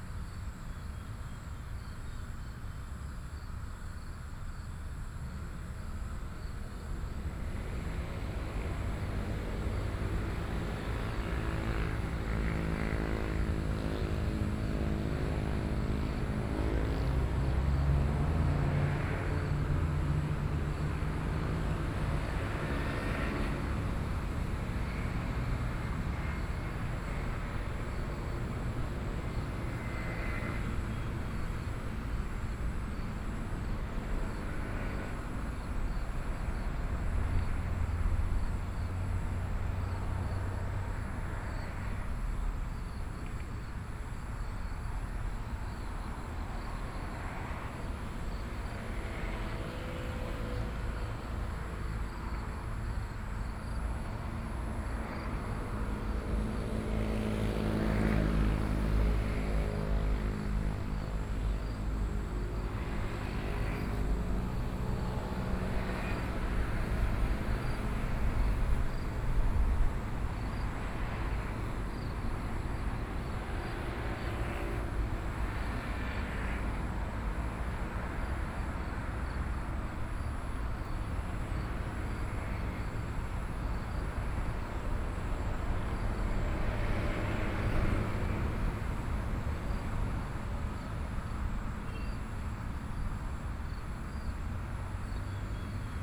五結鄉二結村, Yilan County - Traffic Sound
In front of the Rail, Birds, Traffic Sound, Trains traveling through
Sony PCM D50+ Soundman OKM II
25 July 2014, 18:36